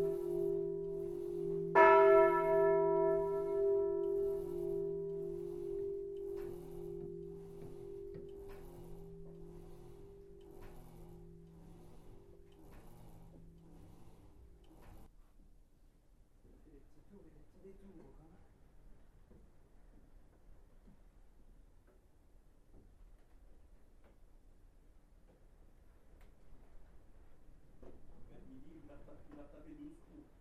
The Saint-Hubert bells, ringed at 12. In first the Angelus, after the midday bell ringed. It's the only place I know where Angelus is ringed on three different bells, it's completely astonishing.
After, the time of the day.
Saint-Hubert, Belgium, 11 October 2010